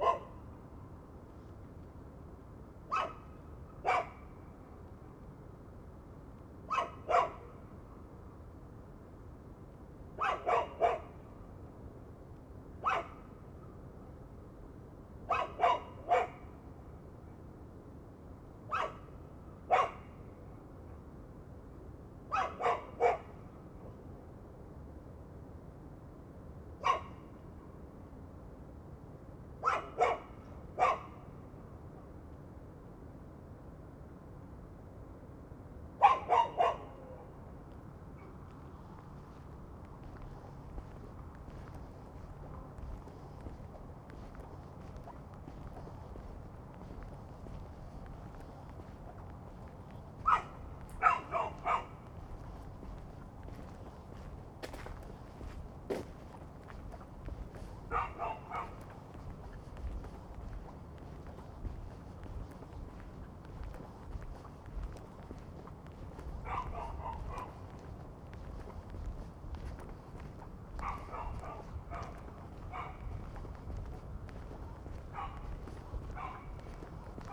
Mariánské Radčice, Tschechien - night walk
on my way back to Mariánské Radčice, night ambience with dogs (Sony PCM D50, Primo EM172)
Mariánské Radčice, Czechia